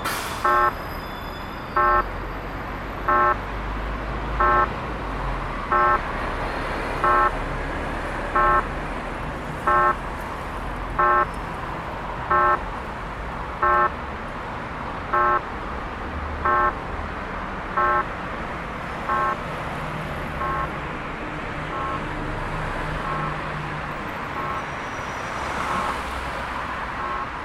beijing, walksignal
pedrestrian, walk, signal, sound
Beijing, China, 13 April 2010